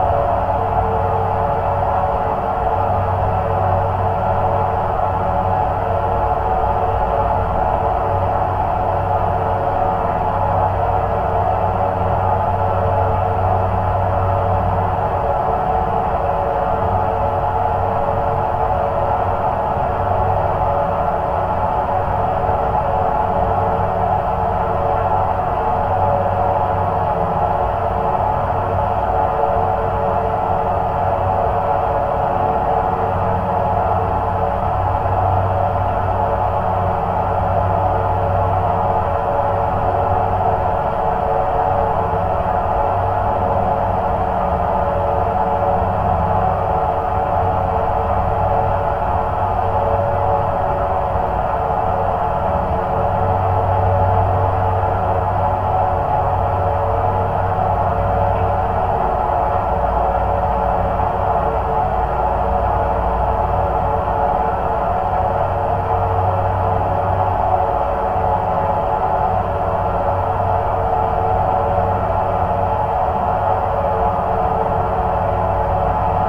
Birštonas, Lithuania, the dam contact
Geophones on metallic parts of the small dam. Drone.
Kauno apskritis, Lietuva, 19 June 2022